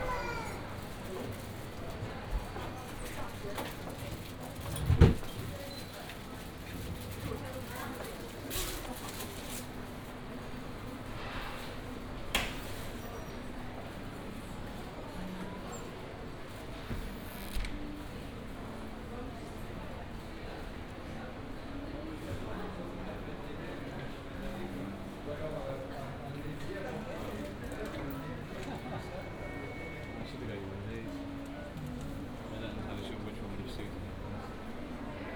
Covered Markets, Oxford, UK - market walk, ambience
short walk in the Covered Markets, near closing time
(Sony D50, OKM2)